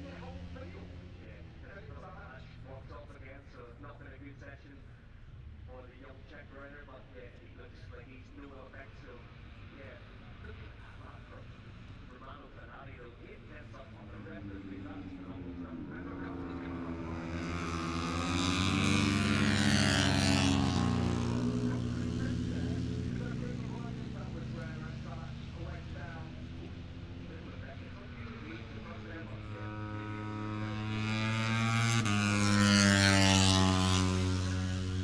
Silverstone Circuit, Towcester, UK - british motorcycle grand prix ... 2021
moto three free practice three ... copse corner ... dpa 4060s to MixPre3 ...